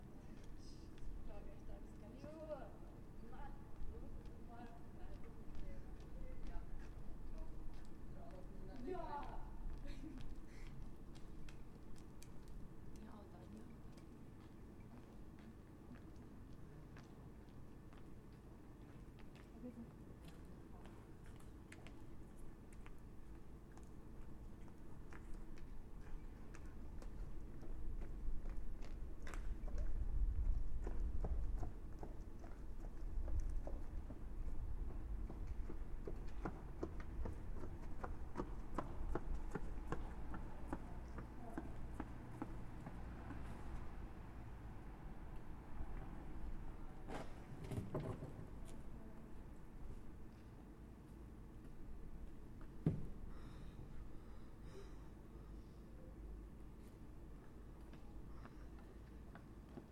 Krekova ulica, Tyrševa ulica, Maribor, Slovenia - corners for one minute
one minute for this corner - krekova ulica and tyrševa ulica
7 August 2012, ~22:00